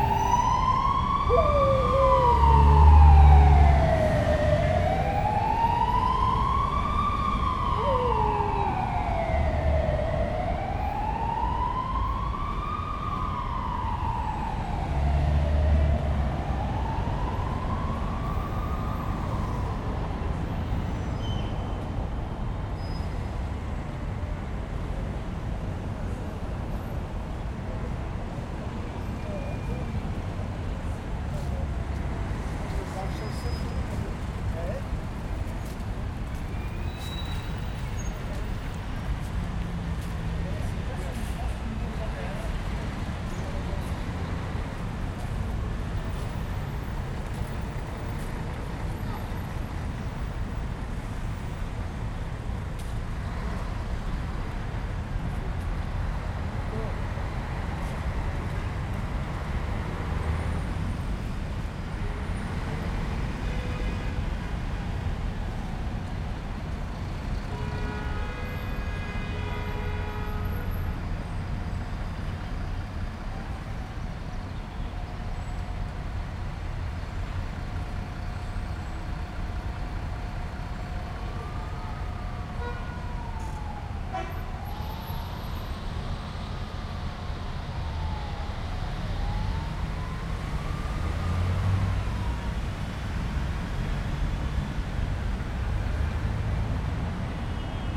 Vitória, Portugal - Cordoaria Garden, Porto
João Chagas Garden - popularly known as the Cordoaria Garden in Porto.
Sounds of seagulls and pigeons eating bread crumbs.
Traffic and the sound of an ambulance.
Zoom H4n